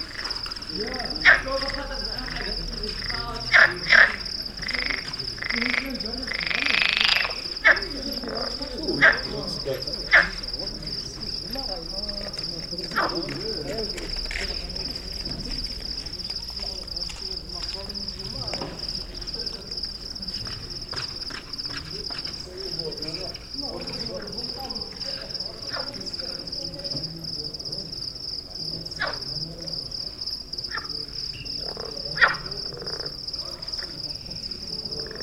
Tafraout, Riverside, Frog and insects
Africa, Morocco, frog, insects, night